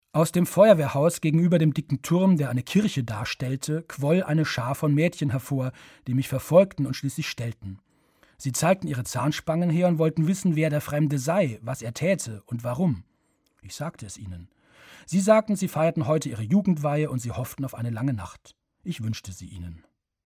untersuhl - feuerwehrhaus
Produktion: Deutschlandradio Kultur/Norddeutscher Rundfunk 2009